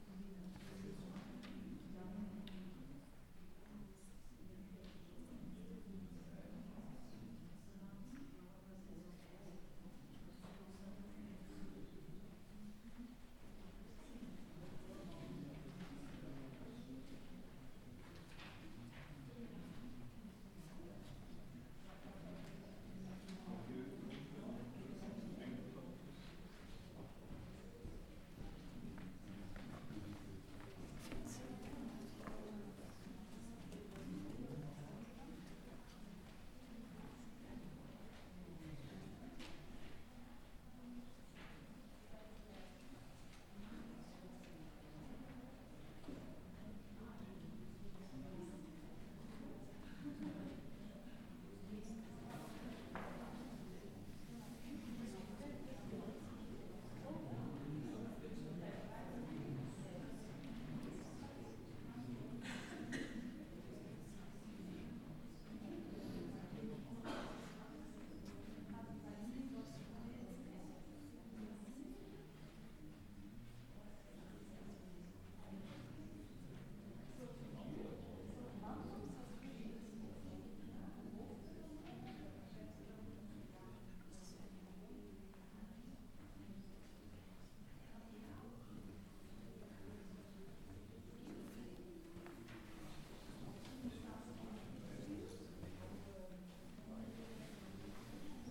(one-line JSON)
{"title": "Hof van Busleyden, Mechelen, België - It almost seemed a lily", "date": "2019-02-02 15:13:00", "description": "[Zoom H4n Pro] Berlinde De Bruyckere exposition in the cellar of Hof van Busleyden museum.", "latitude": "51.03", "longitude": "4.48", "altitude": "6", "timezone": "Europe/Brussels"}